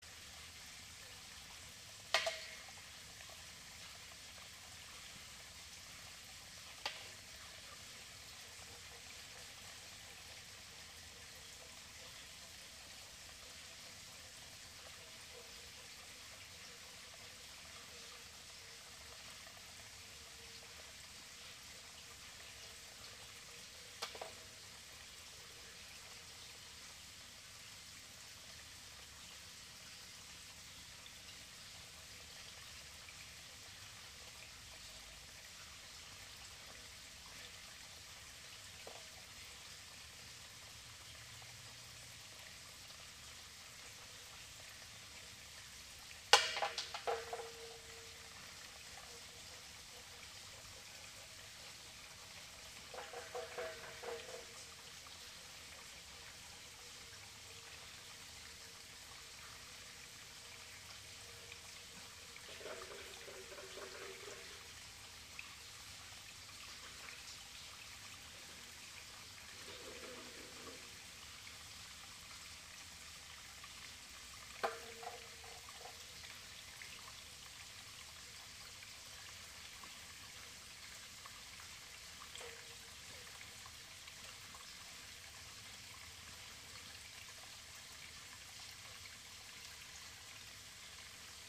Berkeley - stream /2/ above the Woodbridge trail running into a Strawberry creek - Berkeley - stream above the Woodbridge trail running into a Strawberry creek
stream narrowed into a tube underneath a trail.. I threw small stones in it to hear a sound of them rolling down the tube